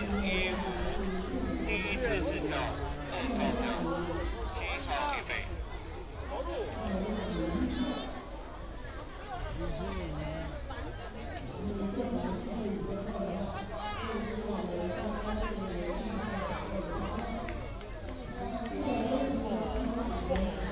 金峰鄉介達國小, Taitung County - sports competition
School and community residents sports competition
4 April 2018, 08:52